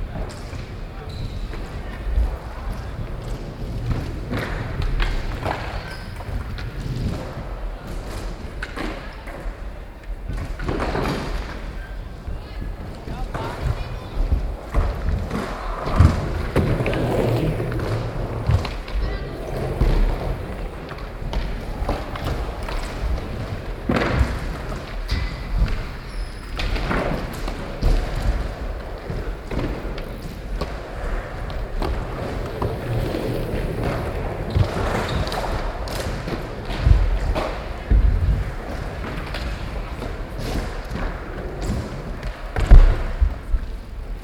Stvanice Skatepark has a history dating to 1993, since that time it has became recognised not only in the Czech Republic In the world as well. This park is namely very modern and hosts prestigious competitions such as the Mystic Sk8 Cup.